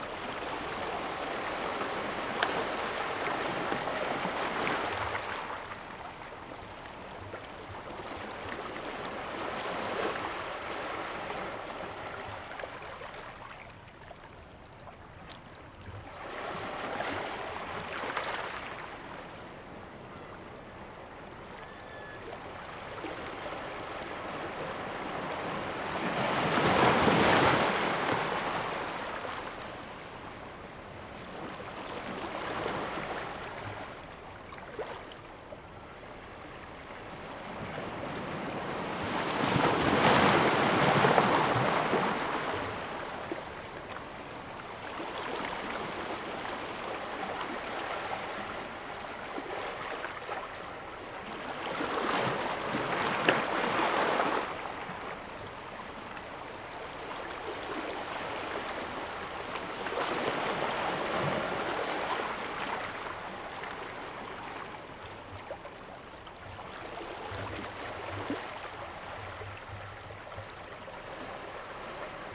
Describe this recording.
sound of the tide, Small fishing port, Birdsong, Sound of the waves, Zoom H2n MS +XY